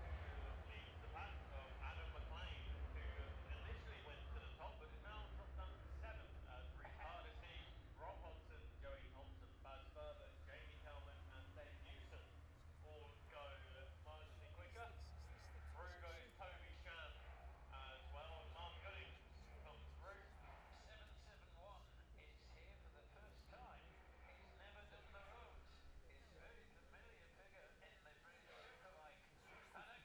Jacksons Ln, Scarborough, UK - gold cup 2022 ... twins ...
the steve henson gold cup 2022 ... twins practice ... dpa 4060s on t-bar on tripod to zoom f6 ...